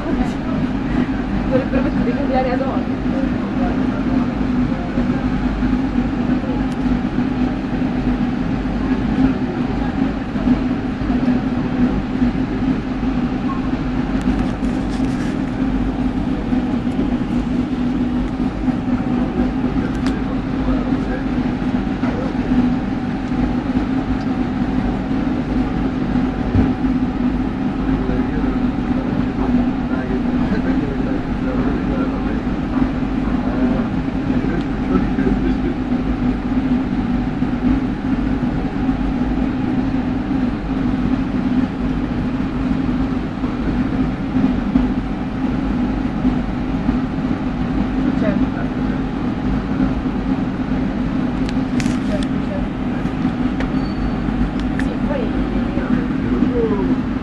Roma, Stazione Metro B Piramide
Rome, subway, line B, station Piramide. Travel from Piramide to Circo Massimo